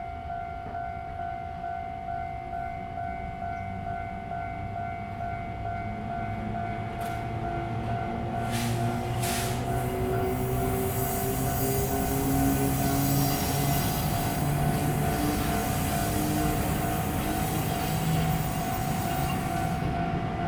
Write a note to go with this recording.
Quiet little town, In the vicinity of the level crossing, Birdsong sound, Traffic Sound, Train traveling through, Very hot weather, Zoom H2n MS+XY